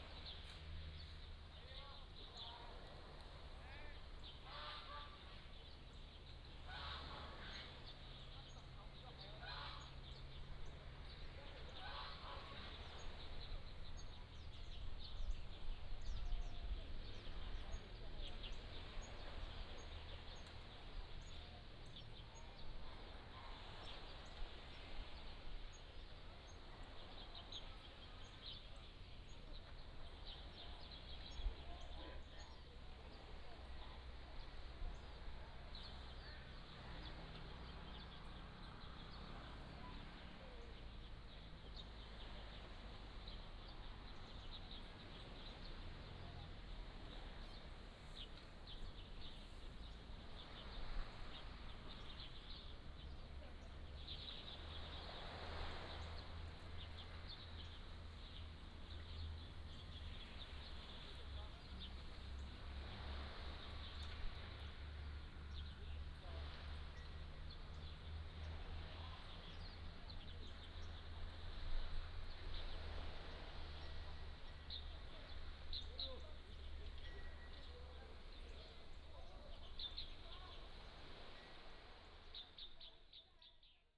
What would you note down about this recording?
Birds singing, Chicken sounds, Sound of the waves, Goose calls, Traffic Sound, Small village